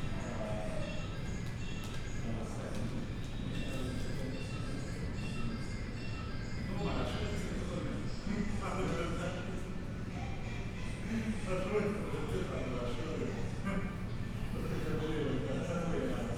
Freeport, Birżebbuġa, Malta - Freeport administration cantina
Freeport administration building, cantina
(SD702, DPA4060)